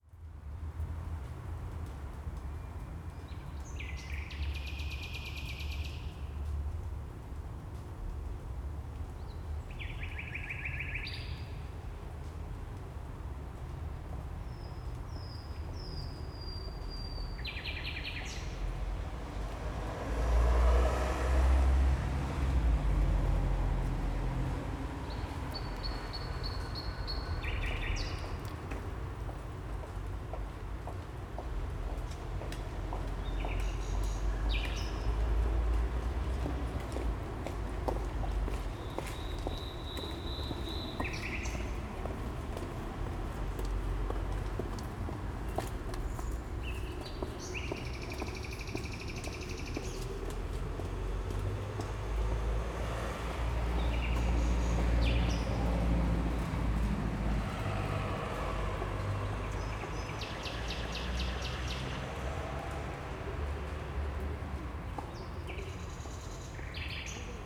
Berlin, Dresdener Str. - night ambience
saturday night ambience Berlin Kreuzberg, Dresdener Str., song of a nightingale from Luisengärten, former Berlin wall area.
15 May 2011, ~02:00